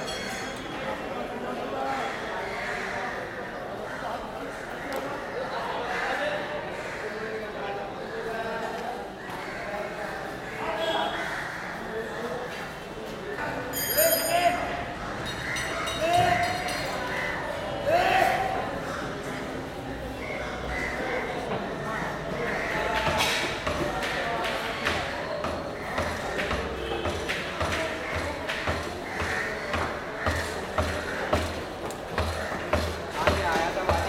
Crawford Meat Market Bombay
Ambiance intérieur - marché aux viandes
Mumbai Suburban, Maharashtra, India, November 2003